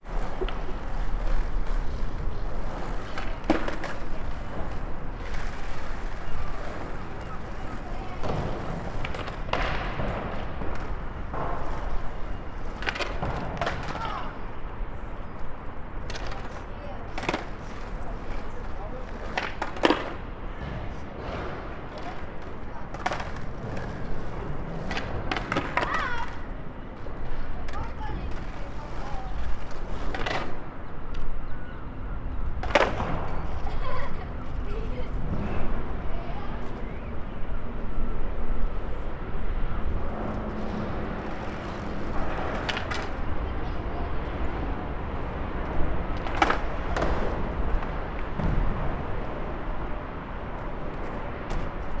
Binckhorst, Laak, The Netherlands - skater kid
skateboarder recorded with sennheiser ME-66 and computer